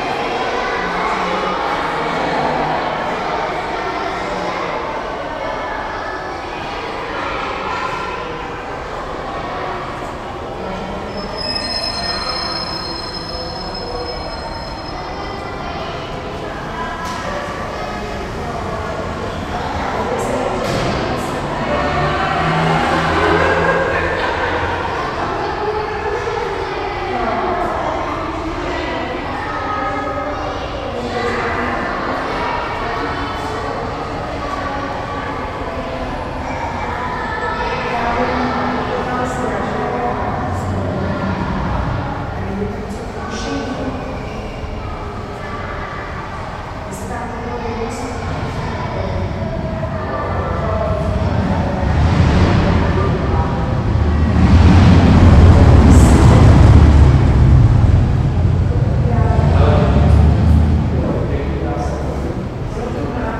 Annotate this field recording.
school children leaving the theater performance in the passage.